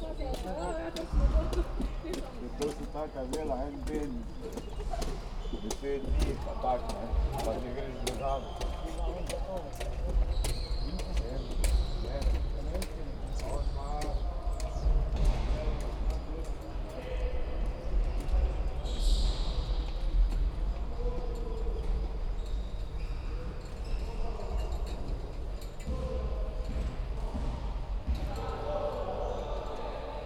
Maribor, Biotech school - morning sports
Maribor Biotech school, students practising in the morning, school yard ambience.
(SD702, DPA4060)